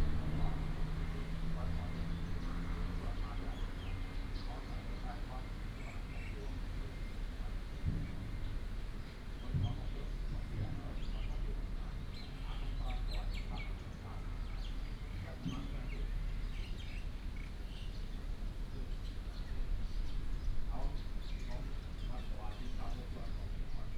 泉州厝公園, Houli Dist., Taichung City - small Park
small Park, traffic sound, A group of workers sleep in the park, Birds sound, Binaural recordings, Sony PCM D100+ Soundman OKM II
Taichung City, Taiwan, 19 September 2017, ~13:00